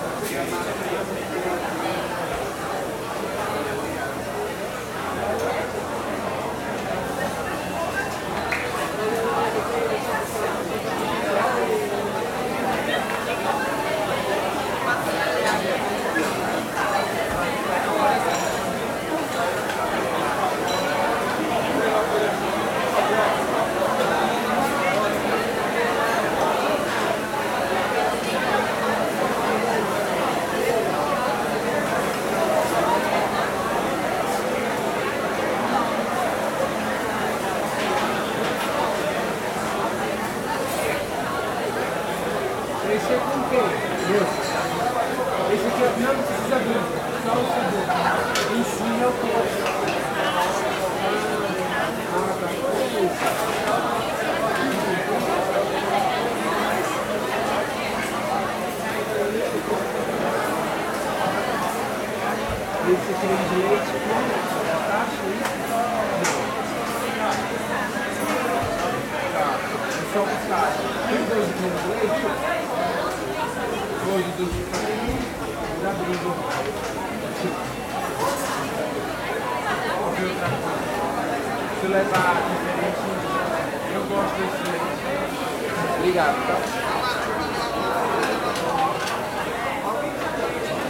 Restaurant Bella Paulista - Restaurant Atmosphere (crowded)
Inside a crowded restaurant in Sao Paulo (Brazil) around midnight. People talking, some noise of the machines in background.
Recorded in Bella Paulista, on 16th of March.
Recording by a MS Schoeps CCM41+CCM8 setup on a Cinela Suspension+windscreen.
Recorded on a Sound Devices 633
Sound Ref: MS BR-180316T07
- Consolação, São Paulo - SP, Brazil, 17 March 2018, 00:30